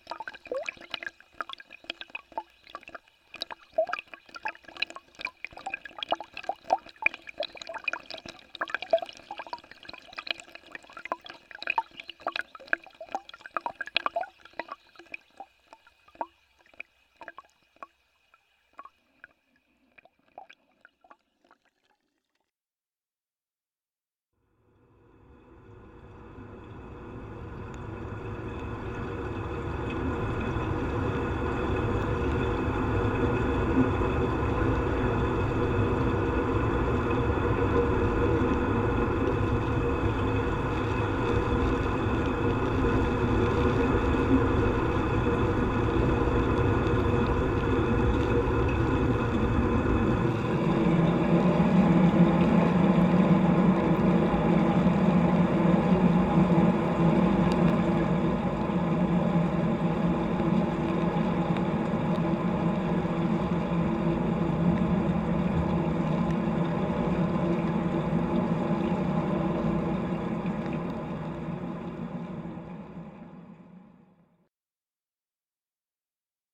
Recorded with Zoom H4N with external hydrophone. The clip consists of three clips with different hydrophone placement as below:
1. Hydrophone was placed below ground in the 3/4" black granite gap where water drains in the basin, around 2-3 inches below the water surface.
2. Hydrophone was placed at the same location, but just beneath the water surface.
3. Hydrophone was placed below ground, in the gap between the metal grate directly under the waterfall and the black granite tile.